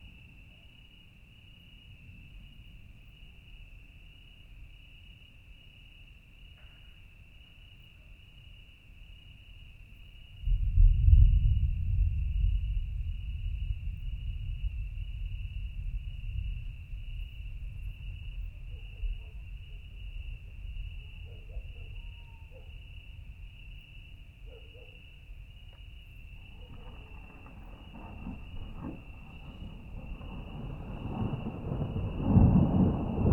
Thunderstorm in the distance, with criquets, owls and ocasional dogs, at Peneda-Geres park, Portugal. Recorded at 4 am in the morning with a SD mixpre6 and a BP4025 XY stereo microphone.
Terras de Bouro, Portugal, 2 September 2018, 04:20